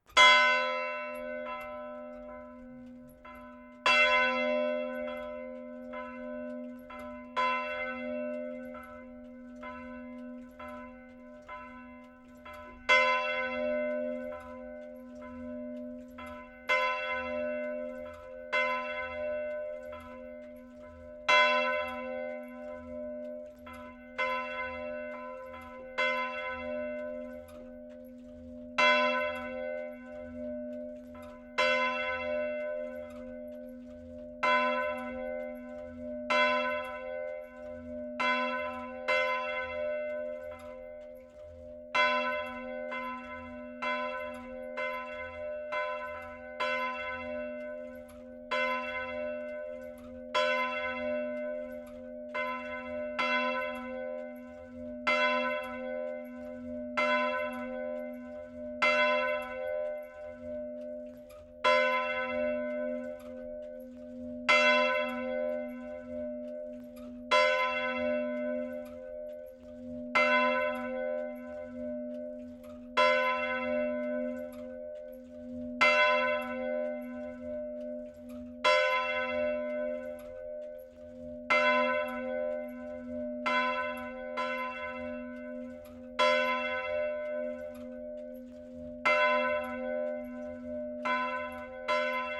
Rue du Maréchal Foch, Brillon, France - Brillon (Nord) - église St-Armand
Brillon (Nord)
église St-Armand
Volée cloche aigüe
Hauts-de-France, France métropolitaine, France, 15 March, 14:00